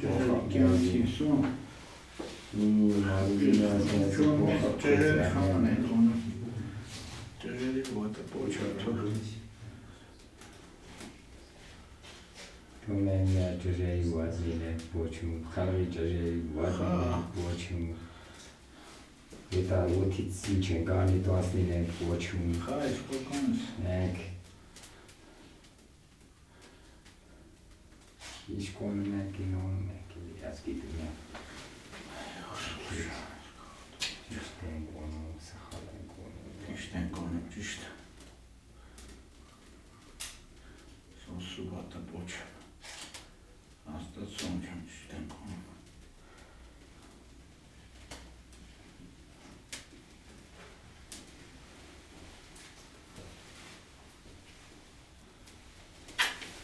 Vank, Arménie - Praying in the monastery
After a terrible storm, some farmers went on the top of this volcano. There's a monastery and they came to pray. This recording is the time they pray inside the church. As you can hear, there's no celebration. They simply light candles and say good words to the holy virgin. Their manner to pray is completely simple.
Armenia, September 2018